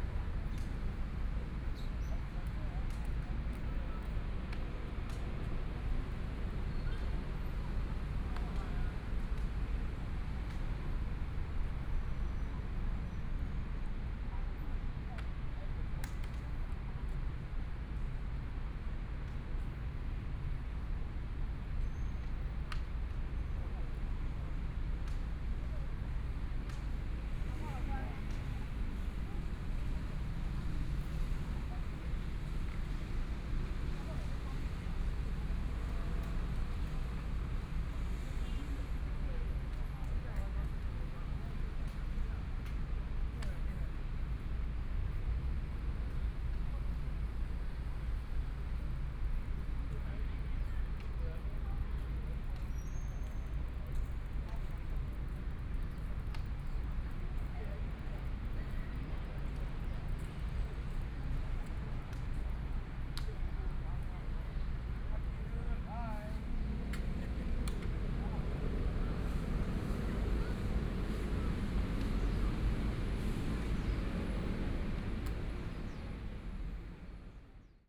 榮星花園公園, Zhongshan District - Chess and Traffic Sound
Old man playing chess and Dialogue among the elderly, Traffic Sound, Binaural recordings, Zoom H4n+ Soundman OKM II